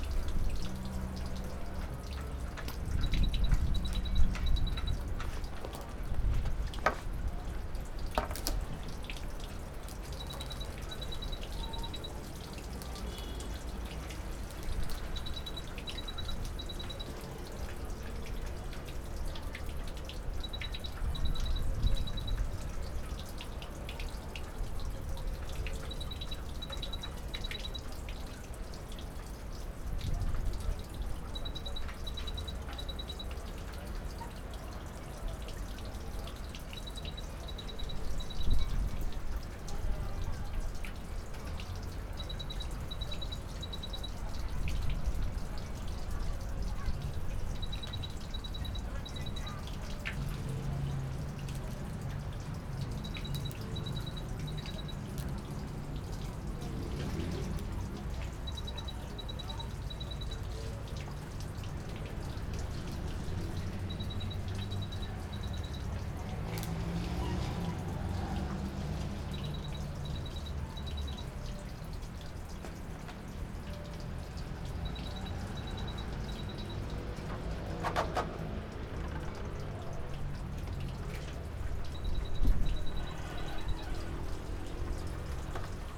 Heraklion Yacht Port - on a pier
port ambience. electric box malfunction, forgotten water hose, roar form the nearby airport, horns form the roundabout
28 September 2012, ~10:00